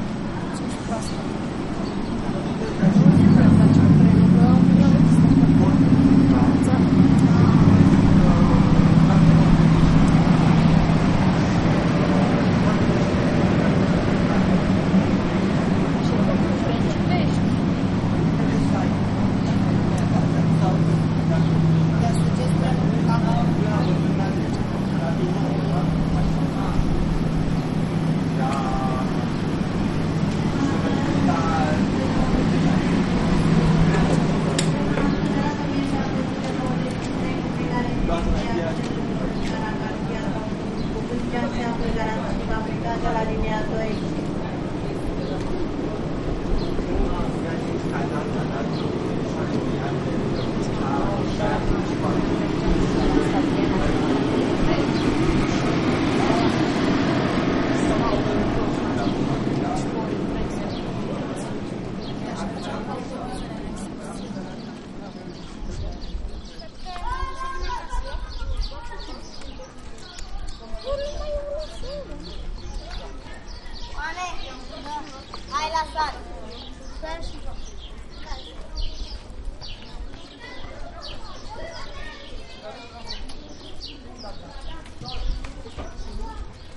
Bucharest WLD 2011
North Railway Station.Matache Market. Popa Tatu Str. Cismigiu Park.
16 July 2011, 18:00